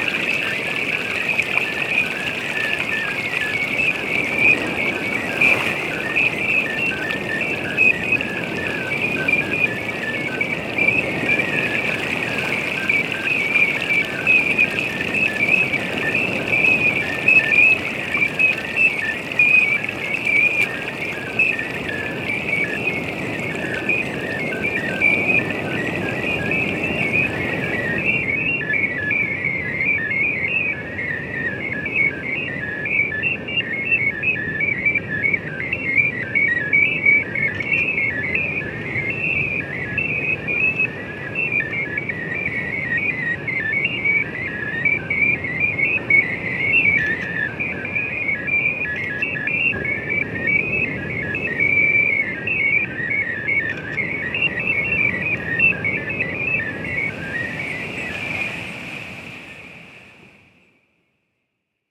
Derivè recorded with Zoom H6, and transducer with a digital reproductor. La Barranquea, Valle de Guerra. Isla de Tenerife. WLD 2015 #WLD2015
San Cristóbal de La Laguna, Santa Cruz de Tenerife, Spain, 17 July, 20:00